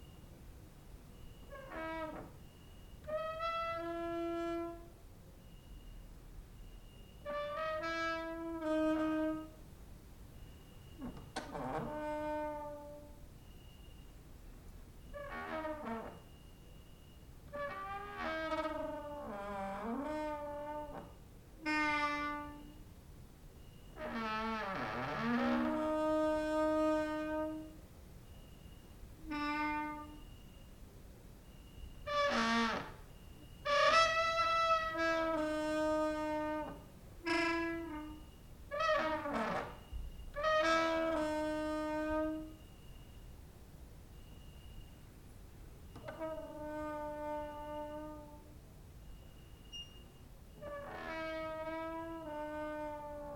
Mladinska, Maribor, Slovenia - late night creaky lullaby for cricket/9
cricket outside, exercising creaking with wooden doors inside